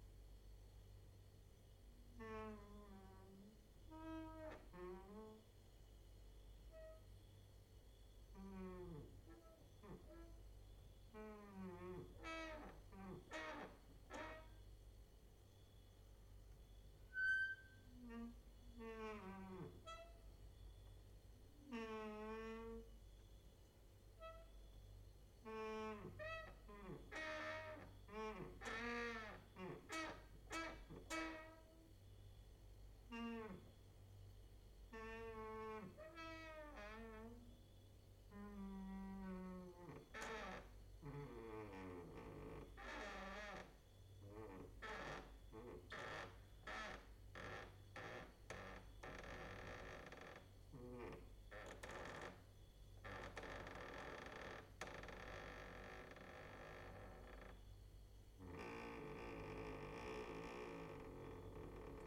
{"title": "Mladinska, Maribor, Slovenia - late night creaky lullaby for cricket/2", "date": "2012-08-07 00:25:00", "description": "cricket outside, exercising creaking with wooden doors inside", "latitude": "46.56", "longitude": "15.65", "altitude": "285", "timezone": "Europe/Ljubljana"}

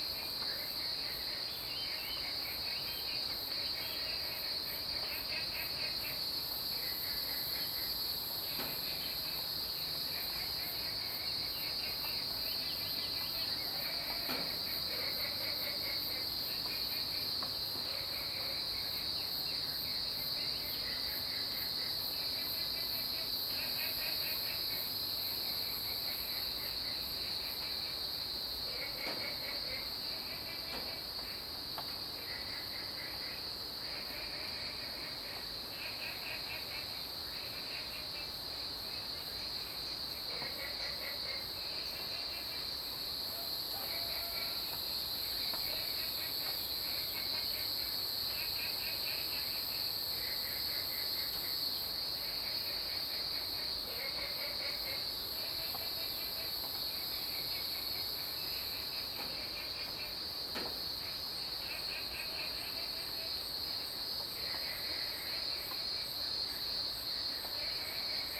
{"title": "Woody House, 桃米里 Puli Township, Nantou County - in the morning", "date": "2015-08-26 06:15:00", "description": "Frogs chirping, Cicada sounds, Birds singing.\nZoom H2n MS+XY", "latitude": "23.94", "longitude": "120.92", "altitude": "495", "timezone": "Asia/Taipei"}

